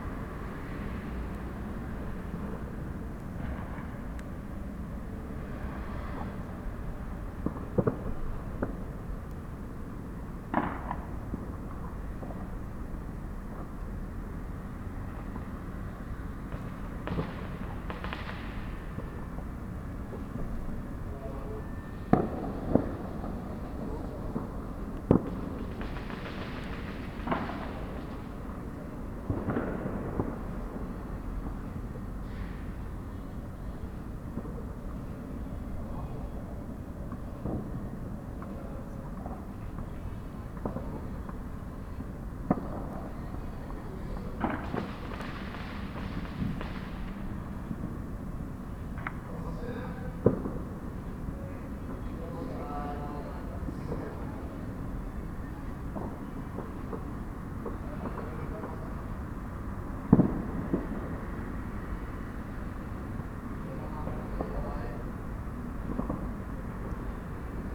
listening out to the bon fires at open and closed attic window